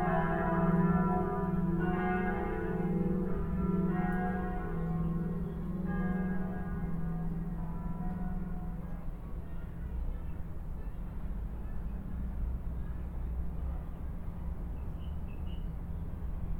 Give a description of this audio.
Cave at Bloomfield park, Church bells